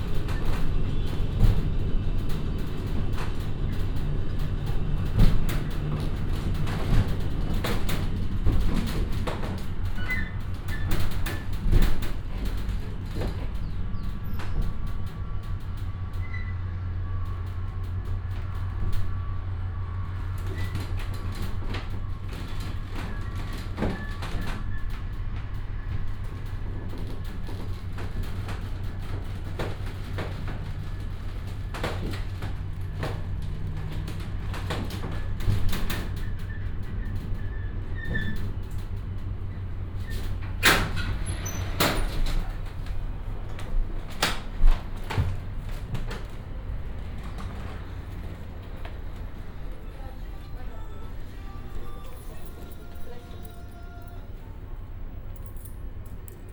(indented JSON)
{
  "title": "elevator Artilleria, Valparaíso, Chile - elevator ride",
  "date": "2015-11-24 14:40:00",
  "description": "ride in one of the many elevators of Valparaiso, at Artilleria.",
  "latitude": "-33.03",
  "longitude": "-71.63",
  "altitude": "27",
  "timezone": "America/Santiago"
}